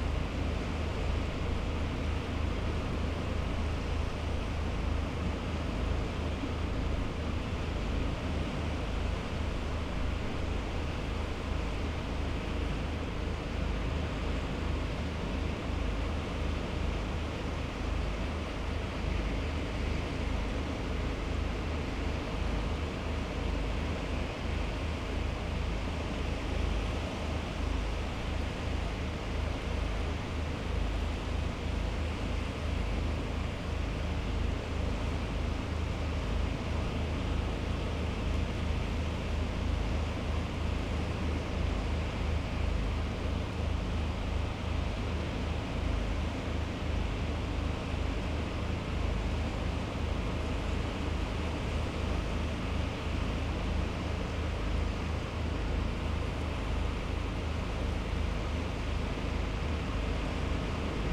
under the east cliff ... incoming tide ... lavalier mics clipped to bag ... bird calls from ... fulmar ... herring gull ... rock pipit ... the school party wander back ... all sorts of background noise ...
17 May, ~11:00